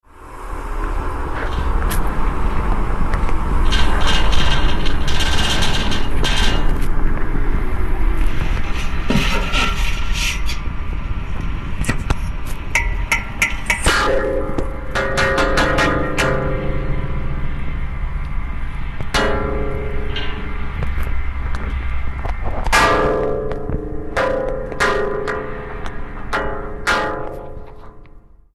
Bach Felippe de Roda Bridge, Barcelona
Barcelona, Spain